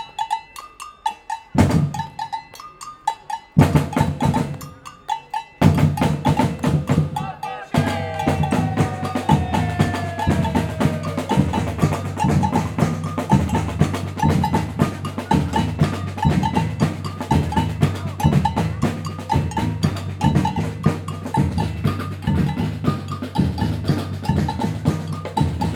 Samba grooves beim Eine-Welt-und-Umwelttag mit Sambanda Girassol.
mehr Aufnahmen und ein Interview hier:
Nordrhein-Westfalen, Deutschland, September 2020